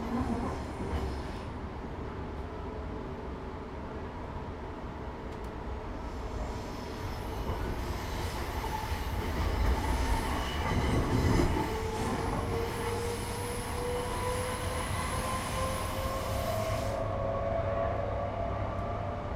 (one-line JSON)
{"title": "Fremantle Station, Perth, Western Australia - Taking a Train From Fremantle to North Fremantle", "date": "2017-11-01 09:00:00", "description": "Taking the train from Fremantle to North Fremantle. Familiar sounds to the locals.", "latitude": "-32.05", "longitude": "115.75", "altitude": "3", "timezone": "Australia/Perth"}